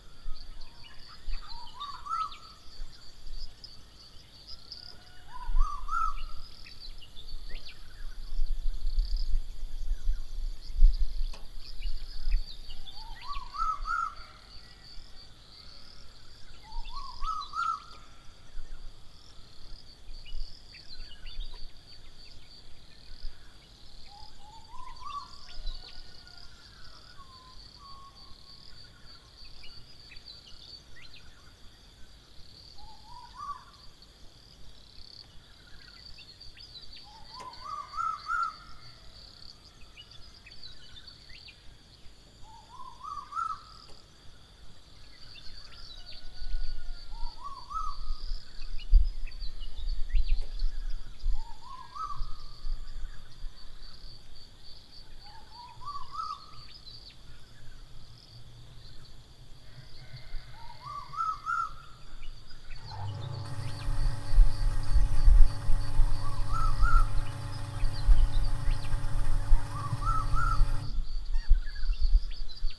Coomba Park NSW, Australia - Imagine Early Morning
Imagine, Coomba Park. Early morning recording of birds, farm animals and water pump. Recorded on a Zoom H1 stereo recorder.